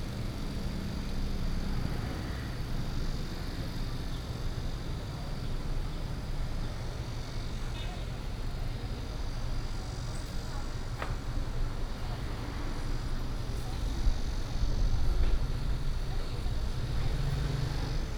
頭城鎮史館, Toucheng Township - Small towns
Very hot weather, Traffic Sound